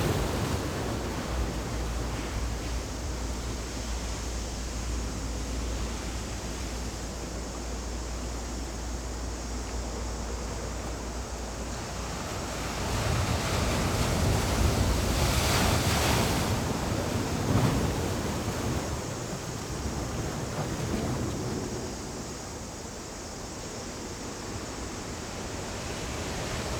頭城鎮外澳里, Yilan County - Sound of the waves
On the coast, Sound of the waves, Very hot weather
Zoom H6+ Rode NT4
Toucheng Township, Yilan County, Taiwan, 7 July 2014